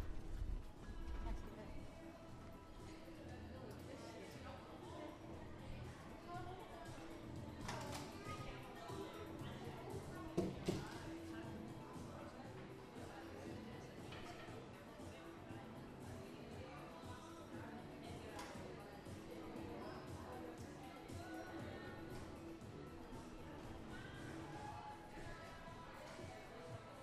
Magasin. Recorded during the workshop Urban/Sound/Interfaces